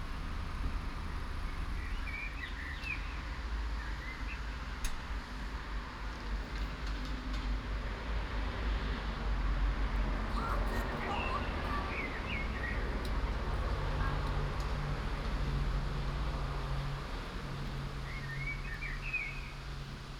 Kiel, Deutschland - Fast food restaurant patio
Spring, Sunday evening, fast food restaurant patio on a busy street. Traffic noise, birds, a few people in a distance. Binaural recording, Soundman OKM II Klassik microphone with A3-XLR adapter, Zoom F4 recorder.
Kronshagen, Germany, May 2017